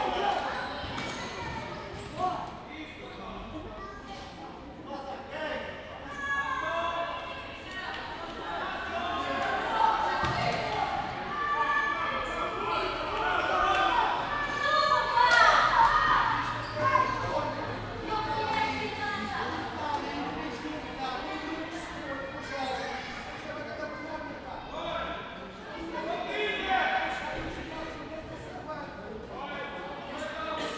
Campolide, Portugal - Jogo da bola
Jogo de futebol gravado no campo do Liberdade Atlético Clube, no Bairro da Liberdade, Lisboa.
25 October 2014, 17:52